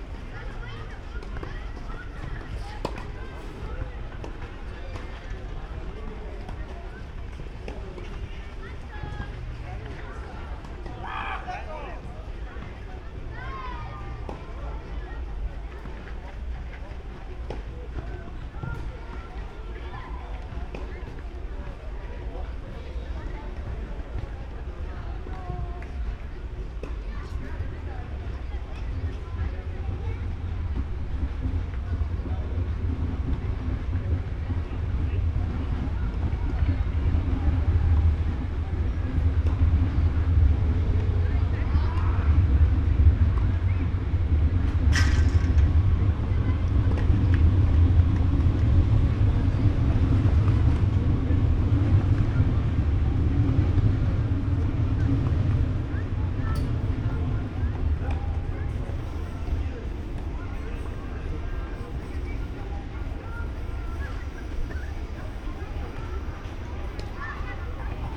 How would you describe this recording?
Köln, Gleisdreieck, things heard on the terrace of restaurant Olympia. The area is surrounded by busy rail tracks. (Sony PCM D50, Primo EM172)